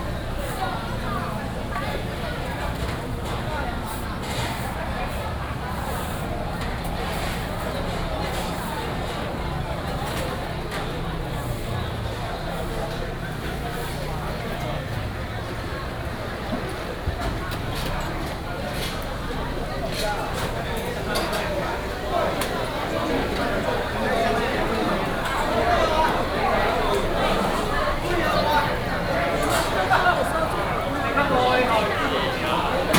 埔里魚市場, Puli Township - night market
night market, Many people are dining
Puli Township, Nantou County, Taiwan, 12 November 2016